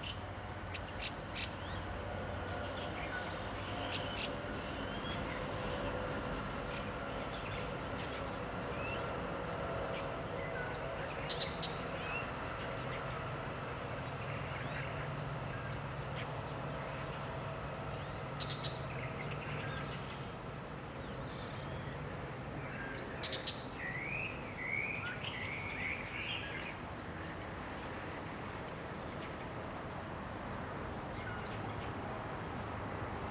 桃米里, Puli Township, Nantou County - Birds singing
In the stream, Traffic Sound, Birds singing
Zoom H2n MS+XY